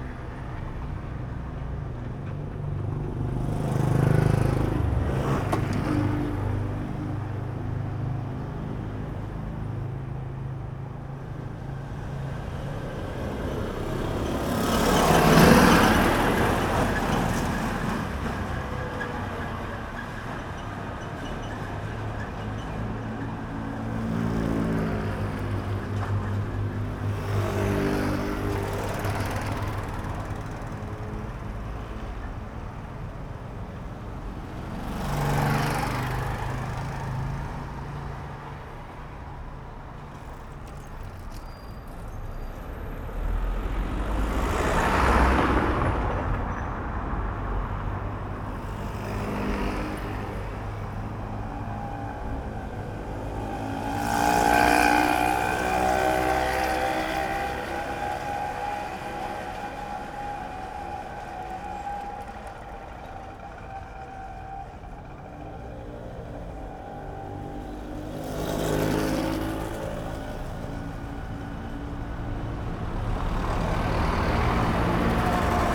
Commuters in early morning
Locaux se dirigeant au travail
Marrakech, Morocco, 13 January, 08:18